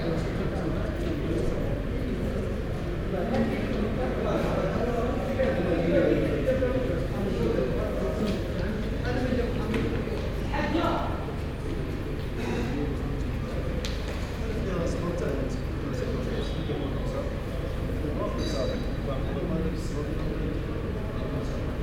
Tanger harbour, Cafe
waiting for the Speed Ferry to Tarifa, cafe, stairs to the embarquement, lot of concrete around
Tangier, Morocco, 4 April